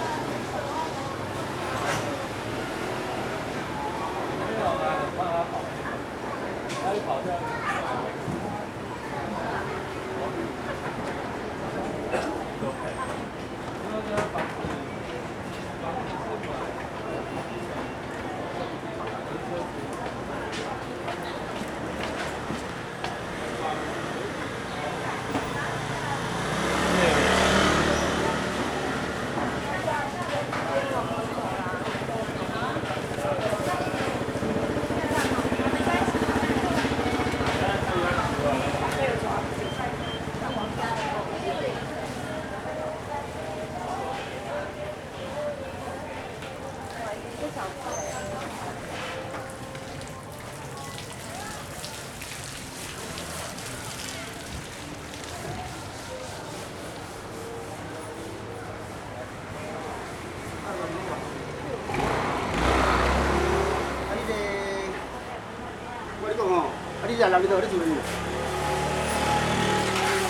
Siwei St., Yonghe Dist., New Taipei City - In the market
In the market, Sony ECM-MS907, Sony Hi-MD MZ-RH1